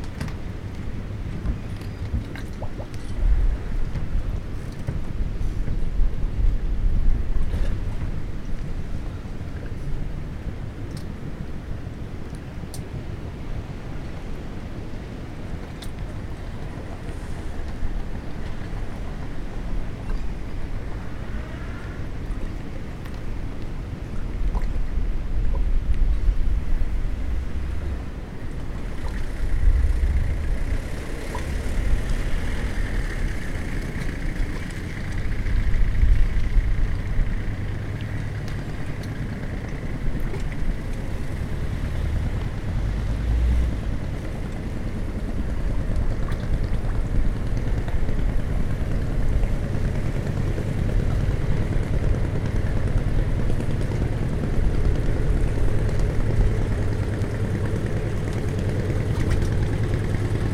Marina Porto - Tricase (Lecce) - Italia - Banchina Porto di Sera
After the dusk...wind, the reverberating sound of the sea, and a fisherman come back...
Marina Porto LE, Italy, 2 October 2015, 19:00